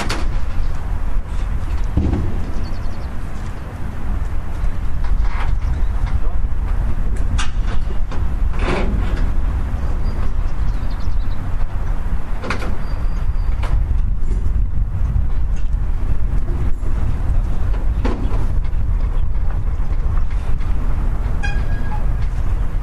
ostrava, lunapark IV, day after
Česko, European Union